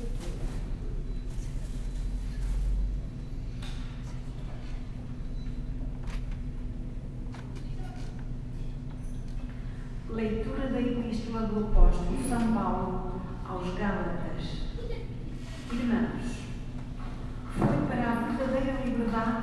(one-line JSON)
{"title": "porto, capela das almas - mass celebration", "date": "2010-10-12 11:00:00", "description": "porto, capela das almas, mass celebration", "latitude": "41.15", "longitude": "-8.61", "altitude": "99", "timezone": "Europe/Lisbon"}